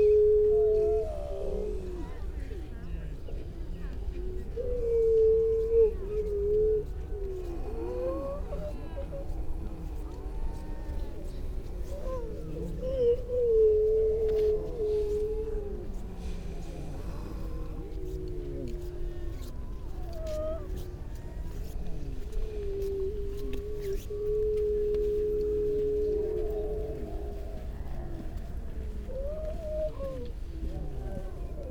grey seal soundscape ... mainly females and pups ... parabolic ... bird calls from ... skylark ... wagtail ... redshank ... linnet ... pied wagtail ... curlew ... starling ... all sorts of background noise ... and a human baby ...